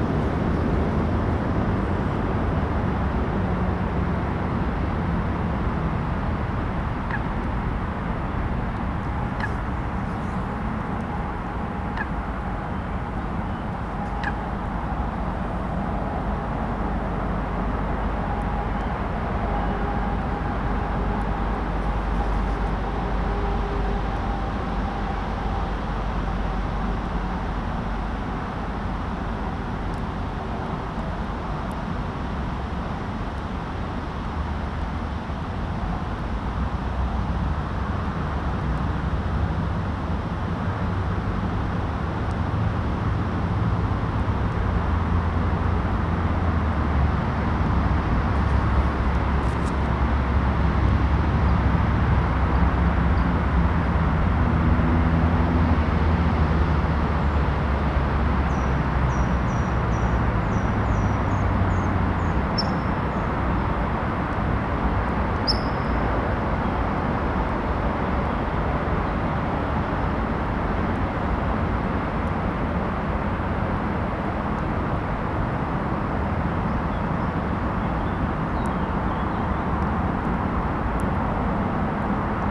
Heinz-Nixdorf-Ring, Paderborn, Deutschland - Hoepperteich ueber Wasser
My ministry
for you
says the place
is this:
There is a nest
in the middle of
everything
and you can come and go
as you like
as long as you
cry out
to me.